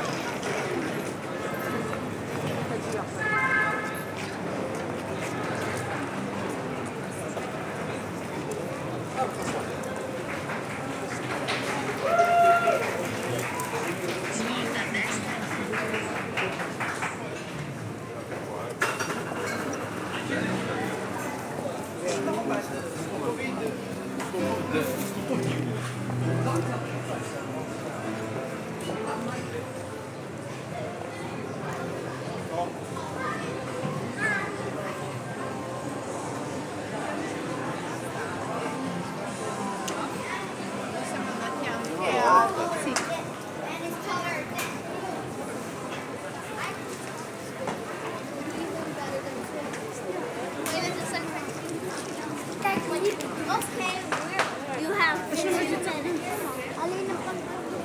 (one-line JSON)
{"title": "Paris, France - The restaurants street", "date": "2019-01-02 17:00:00", "description": "Traveling through Paris, we made a walk into the Huchette street. It's full of tourists. Every restaurant is a tourist trap. Trafic noise into the rue Saint-Jacques, tourists talking into the rue de la Huchette, some scammers saying they are the best restaurant and at the end, trafic noise of the Boulevard Saint-Michel.", "latitude": "48.85", "longitude": "2.35", "altitude": "35", "timezone": "GMT+1"}